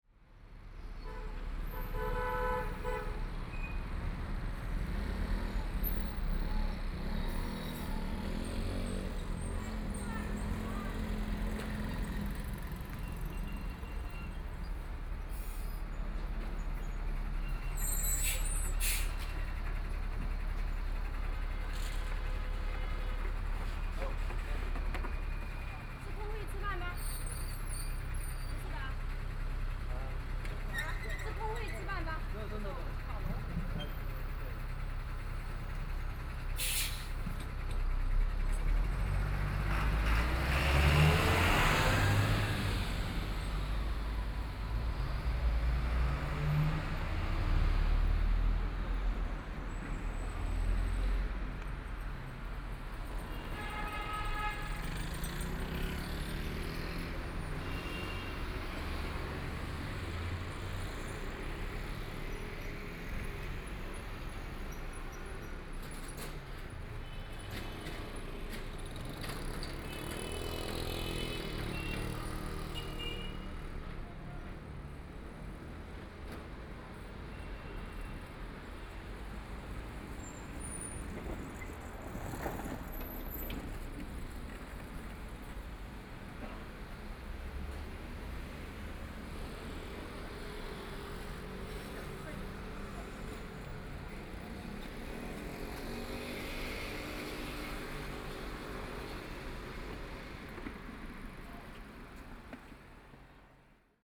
Traffic Sound, Old small streets, Narrow channel, Binaural recordings, Zoom H6+ Soundman OKM II
Shanghai, China, December 2013